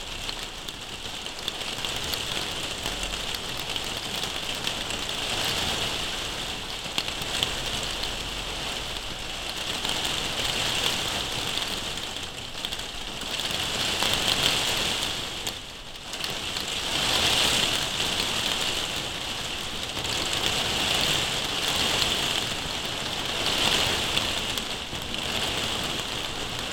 Caen, France - Rain
Normandy rain recorded under a plastic roof with Zoom H6
26 April, 15:33